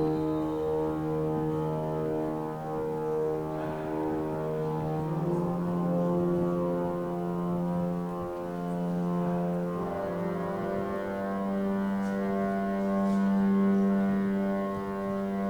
walking into St. Baafskathedraal while the big organ is being tuned. listening to small snippets of conversation in various languages. then walking out.
Gent, Belgium, 30 June 2015, ~14:00